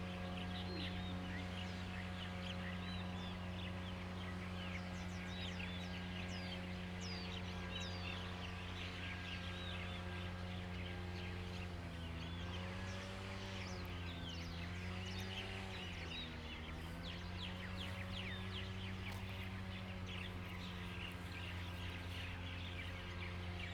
鐵漢堡, Lieyu Township - Birds singing
Birds singing, Lawn mower, Abandoned military sites
Zoom H2n MS+XY
4 November, 09:08